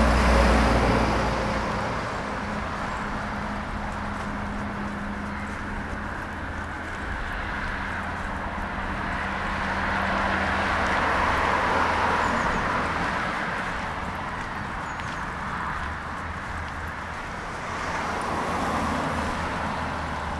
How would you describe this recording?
heavy road traffic. walking on the raised footpath between the road and River Shannon. Interesting to note the difference in amplitude envelopes between vehicles approach from front or back. Faint seagulls on the left.